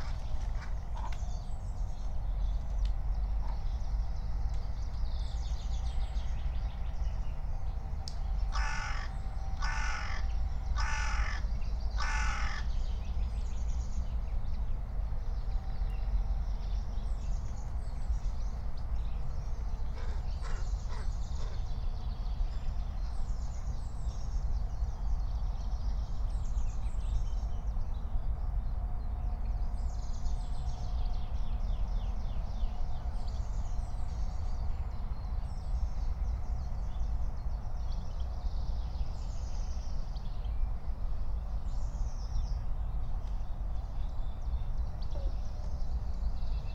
07:00 Berlin Buch, Lietzengraben - wetland ambience